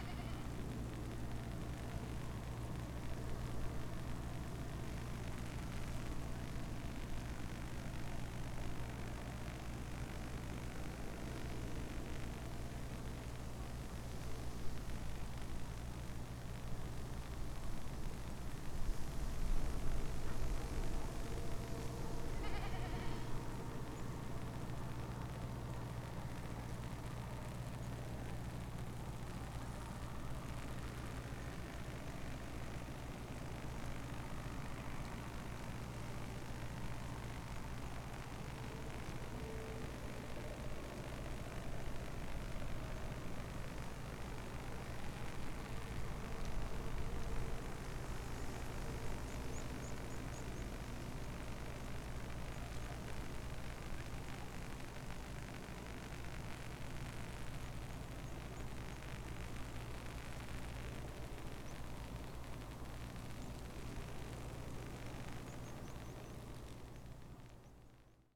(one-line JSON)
{"title": "crackling high voltage wires", "date": "2011-09-26 16:40:00", "description": "actually they are difficult to record, cause high voltage field around just makes my to act in wild ways, overload...", "latitude": "55.55", "longitude": "25.56", "altitude": "101", "timezone": "Europe/Vilnius"}